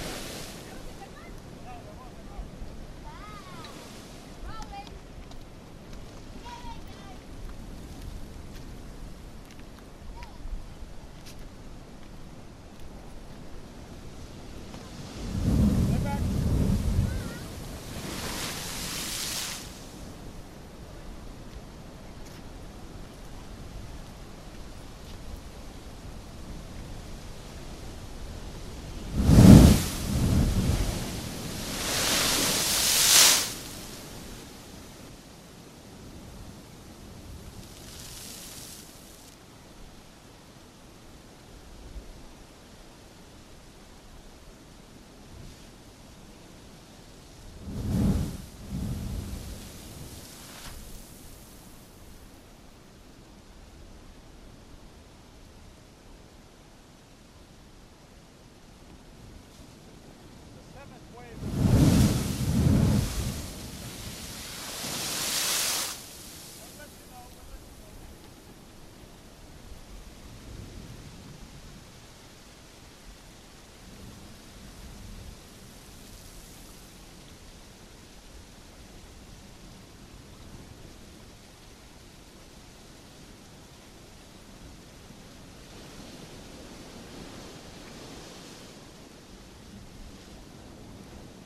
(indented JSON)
{
  "title": "Alofaaga, Samoa - Alofaaga Blowholes",
  "date": "2000-07-26 11:06:00",
  "description": "Blowholes recorded from nearby Minidisc Recorder",
  "latitude": "-13.81",
  "longitude": "-172.53",
  "timezone": "Pacific/Apia"
}